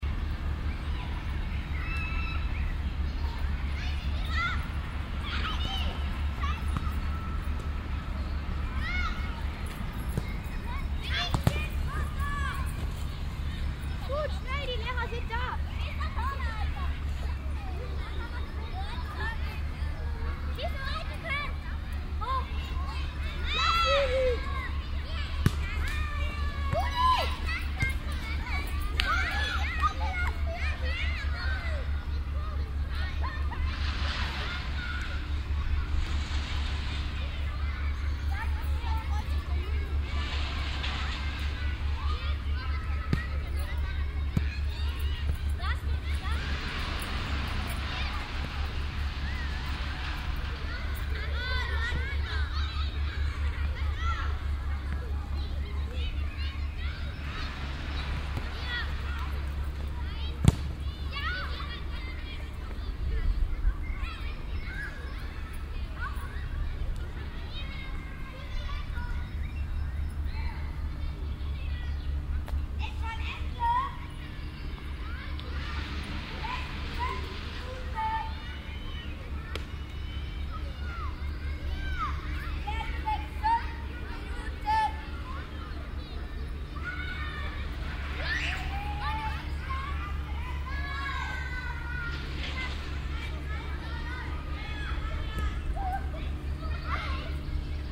cologne, stadtgarten, fussballspiel auf wiese
schulkinder der nahe gelegenen montessori schule beim fussballspiel - im hintergrund das schreddern von ästen des grünflächenamts
stereofeldaufnahmen im mai 08 - morgens
project: klang raum garten/ sound in public spaces - outdoor nearfield recordings